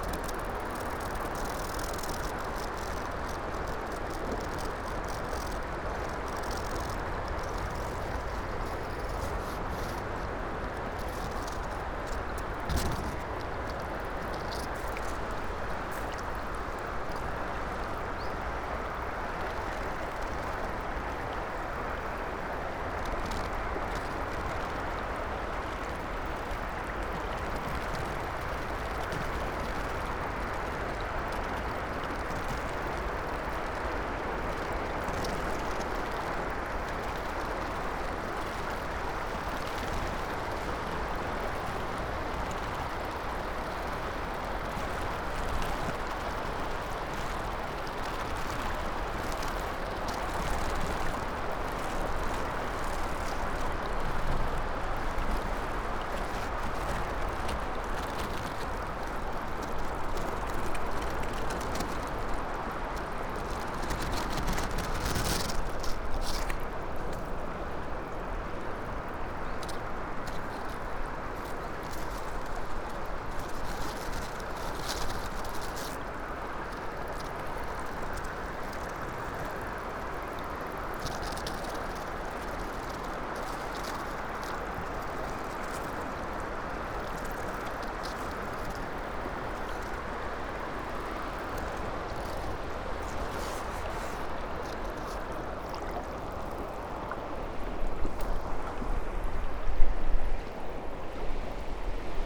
river Drava, Dvorjane - tiny stalk of poplar tree on paper
tiny stem, moved by water flow and wind, touching unfolded book, spoken words